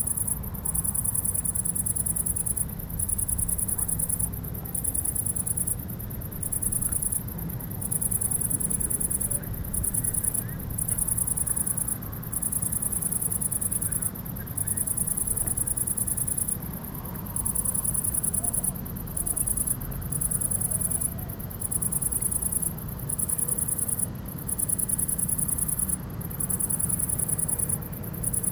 A powerful locust sing in the grass of a pasture.
Sahurs, France, 18 September 2016